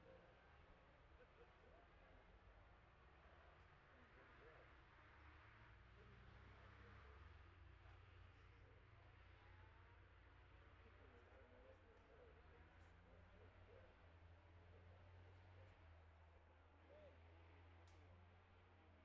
Jacksons Ln, Scarborough, UK - olivers mount road racing ... 2021 ...
bob smith spring cup ... ultra-lightweights race 1 ... dpa 4060s to MixPre3 ... mics clipped to twigs in a tree some 5m from track ...